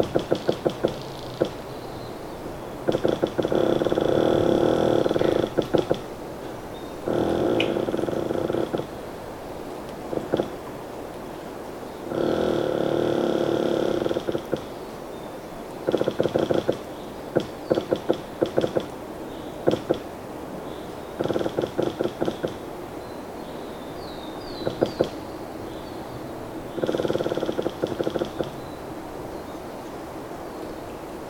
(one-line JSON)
{"title": "Utena, Lithuania, another squeaking tree", "date": "2021-04-13 15:50:00", "description": "my obsession with trees continues. another squeaking pine tree. this time the sound is produced not by two rubbing trees but by a single pine tree with two tops. first part of recording: geophone. second part: small omni", "latitude": "55.52", "longitude": "25.61", "altitude": "122", "timezone": "Europe/Vilnius"}